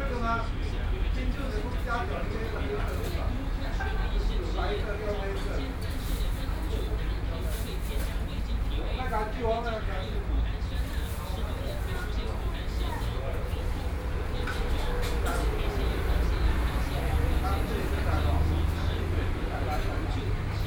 中山區永安里, Taipei city - In the restaurant
In the restaurant, Traffic Sound
Binaural recordings